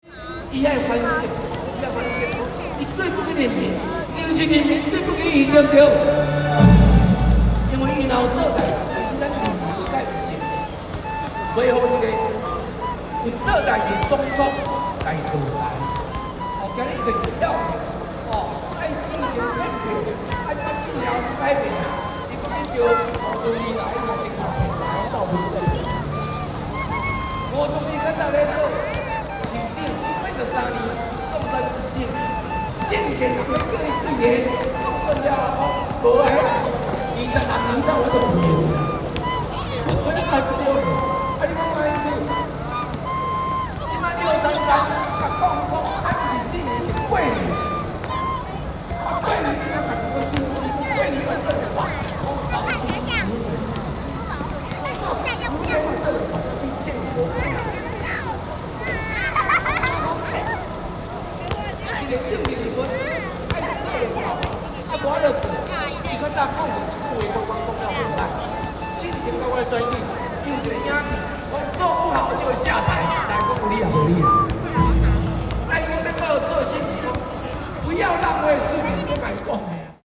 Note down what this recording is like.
Politicians speech, Children are playing a game, Rode NT4+Zoom H4n